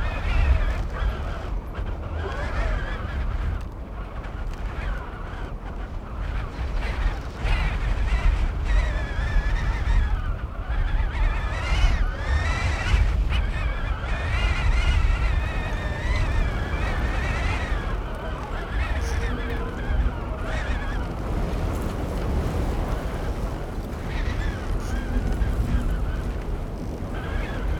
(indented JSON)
{
  "title": "Vierge du Villaret, Pont-de-Montvert-Sud-Mont-Lozère, France - A L OMBRE DU VENT #1",
  "date": "2021-04-06 16:07:00",
  "description": "Cueillette et ballade en Lozère par temps de vent et de pluie!\nles herbes sèches tintent, les branches grincent, les fils sifflent et les portails chantent.",
  "latitude": "44.34",
  "longitude": "3.69",
  "altitude": "1101",
  "timezone": "Europe/Paris"
}